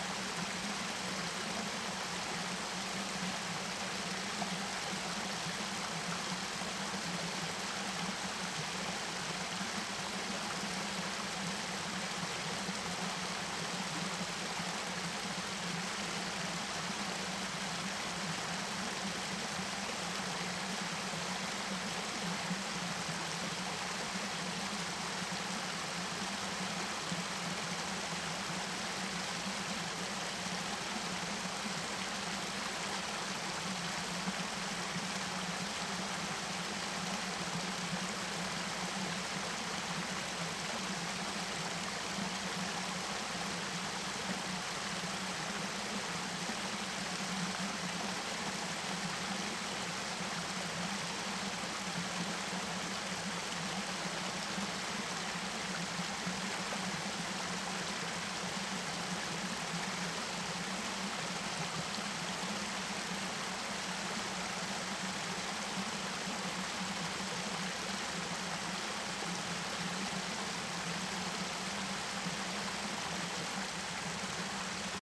Strawberry creek running through the Berkeley campus.. I was surprised to se a number of craw fish in it...